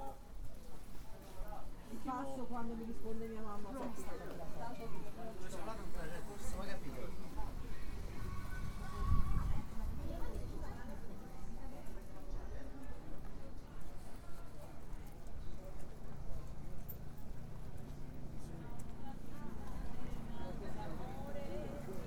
Via Federigi 55047 Querceta (LU) - Italy - A walk at the fair - Fiera di S.Giuseppe, Querceta.
A walk throug the local annual St.Joseph fair; St. Joseph is the patron saint of Querceta. Italian vendors singing, chinese vendors chatting, north african passers-by
Ripa- Pozzi-Ponterosso LU, Italy, 19 March, 11:30